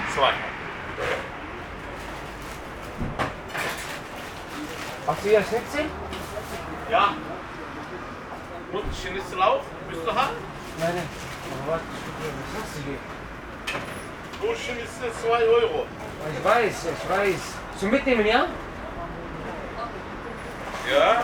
berlin, kottbusser damm: imbiss - the city, the country & me: fried chicken takeaway
strange conversation between staff member and guest
the city, the country & me: november 9, 2012